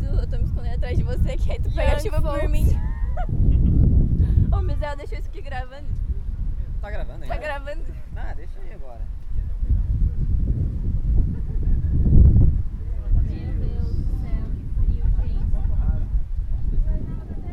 Lagoinha do Leste, Florianópolis, Santa Catarina, Brazil - Chating during the rain at Lagoinha do Leste beach
After a stormy night, a group of friends that were camping at Lagoinha do Leste (Little East Lagoon) - Brazil are chating about the rain that still falls and decide to enter the lagoon during the rain, you can hear the splash sound.